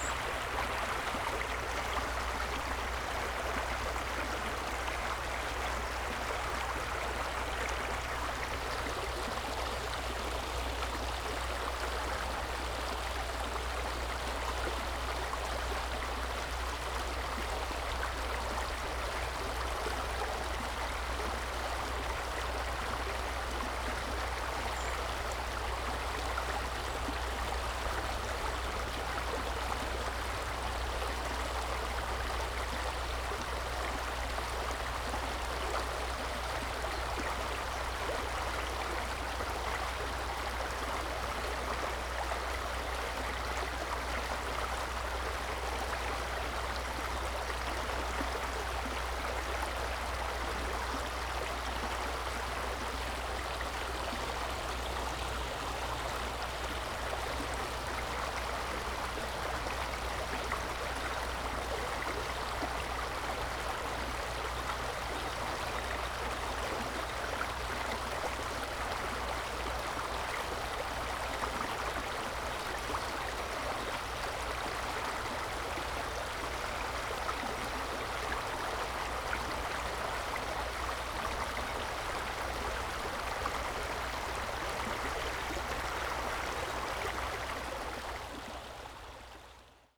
river Wuhle, walking upstream
(SD702, SL502 ORTF)